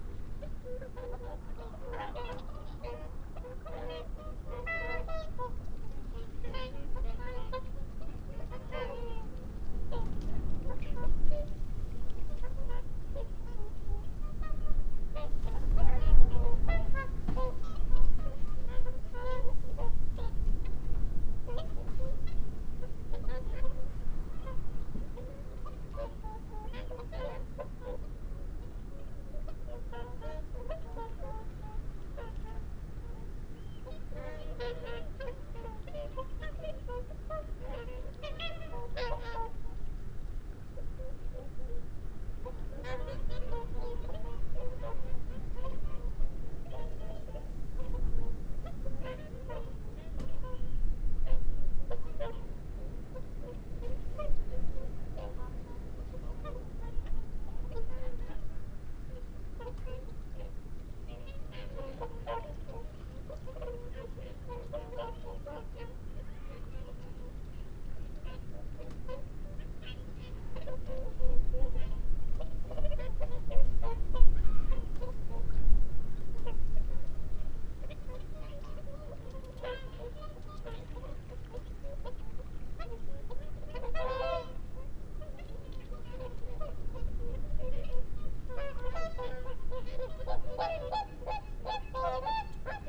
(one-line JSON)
{"title": "Dumfries, UK - whooper swan call soundscape ...", "date": "2022-02-03 17:05:00", "description": "whooper swan call soundscape ... xlr sass to Zoom h5 ... bird calls from ... curlew ... shoveler ... wigeon ... barnacle geese ... mallard ... lapwing ... unattended time edited extended recording ...", "latitude": "54.98", "longitude": "-3.48", "altitude": "8", "timezone": "Europe/London"}